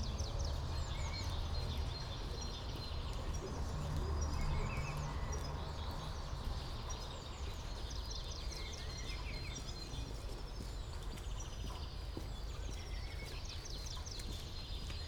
all the mornings of the ... - apr 23 2013 tue